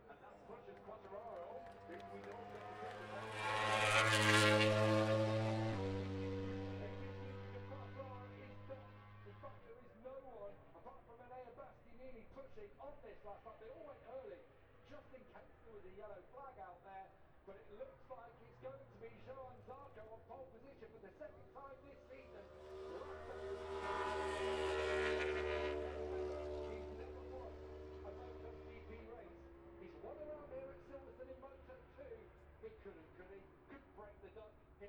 Silverstone Circuit, Towcester, UK - british motorcycle grand prix 2022 ... moto grand prix ...
british motorcycle grand prix 2022 ... moto grand prix qualifying two ... zoom h4n pro integral mics ... on mini tripod ...